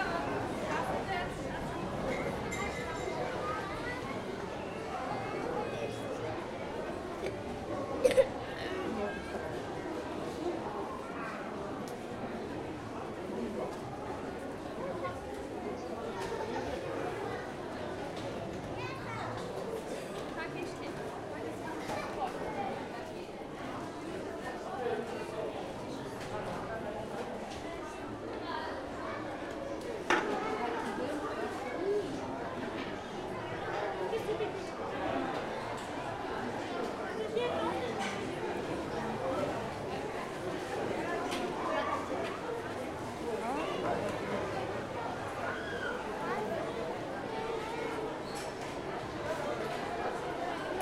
National amusement park, Ulaanbaatar, Mongolei - food court 2
another recording some seconds later